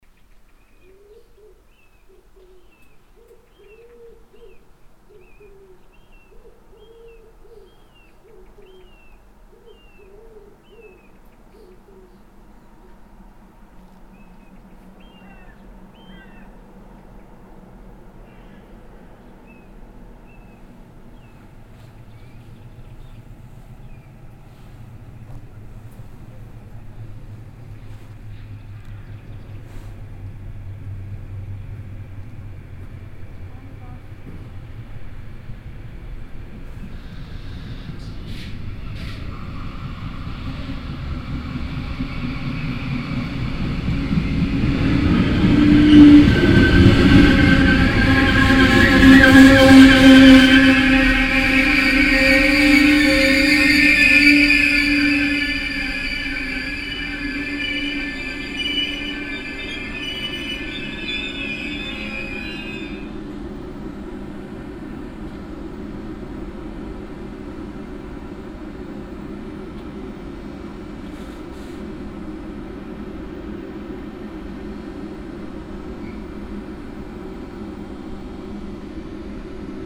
michelau, station
At the station of Michelau on a sunday morning. A train driving in, a few passengers enter the train, the train leaves the station again - the morning silence.
Michelau, Bahnhof
Am Bahnhof von Michelau an einem Sonntagmorgen. Ein Zug fährt ein, ein paar Fahrgäste steigen ein, der Zug fährt wieder aus dem Bahnhof heraus - die Morgenstille.
Michelau, gare
À la gare de Michelau, un dimanche matin. Un train entre en gare, quelques rares passagers montent dans le train le train quitte à nouveau la gare – le silence du matin
Project - Klangraum Our - topographic field recordings, sound objects and social ambiences